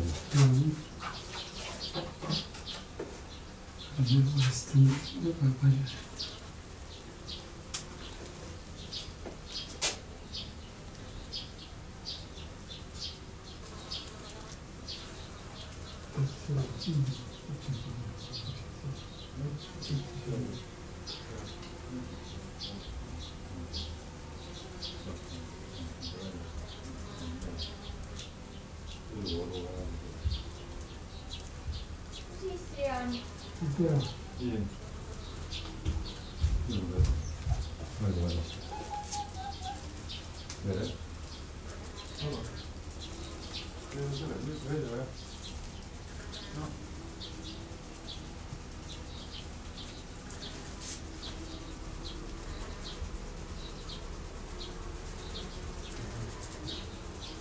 greenhouse, Estonian talk, insects buzzing
Järva County, Estonia